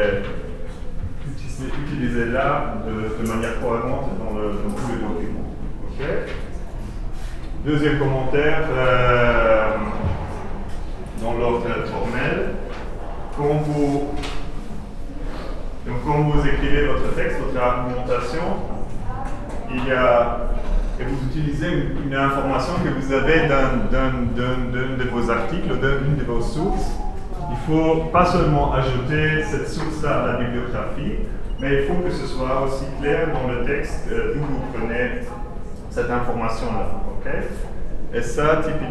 Quartier des Bruyères, Ottignies-Louvain-la-Neuve, Belgique - A course of legal matters
In the faculty of law, a course of legal matters.